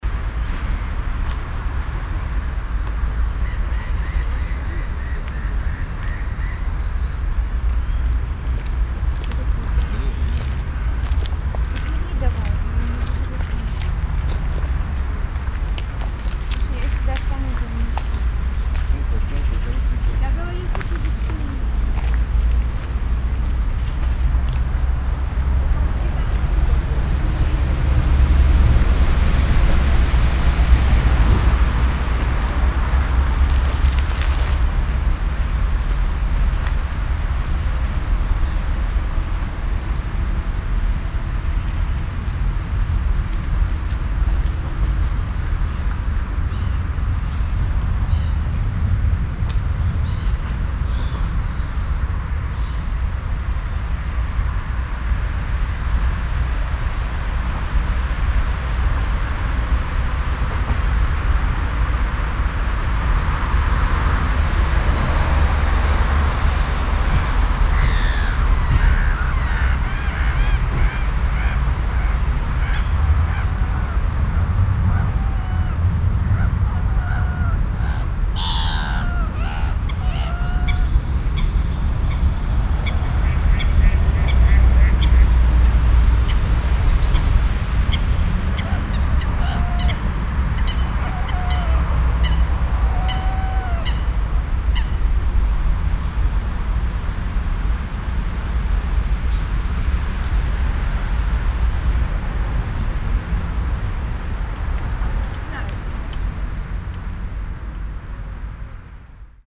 Düsseldorf, Hofgarten, Weg am See
Mittags auf dem Seeweg nahe der stark befahrenen Verkehrswege - Schritte auf Kies, vereinzelte Rufe des Seegefieders, stimmen von passanten
soundmap nrw: social ambiences/ listen to the people - in & outdoor nearfield recordings
21 August